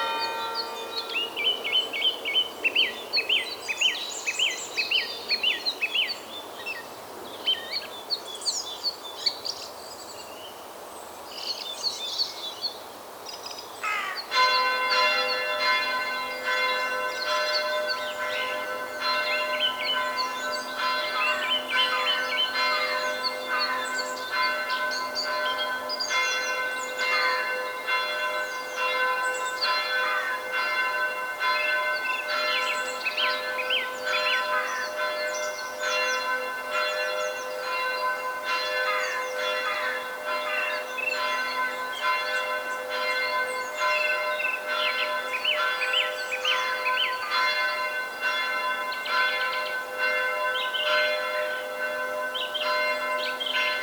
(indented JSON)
{
  "title": "neoscenes: Volker's patio in spring",
  "date": "2008-04-25 15:09:00",
  "latitude": "50.92",
  "longitude": "7.18",
  "altitude": "159",
  "timezone": "Europe/Berlin"
}